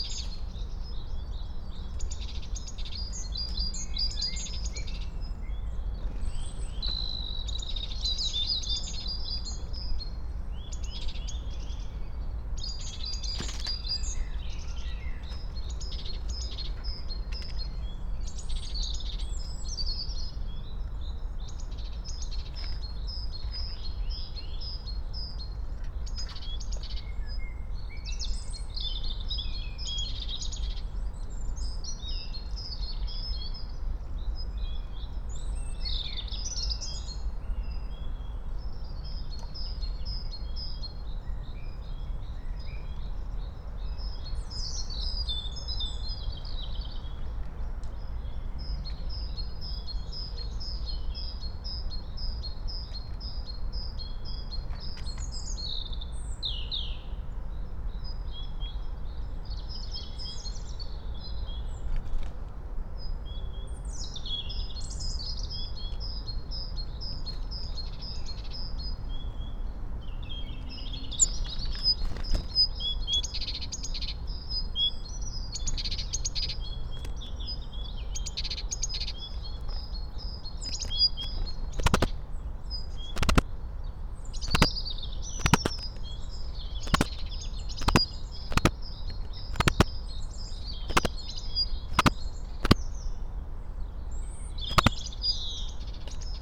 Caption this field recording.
09:46 Berlin, Königsheide, Teich, (remote microphone: AOM 5024HDR/ IQAudio/ RasPi Zero/ 4G modem)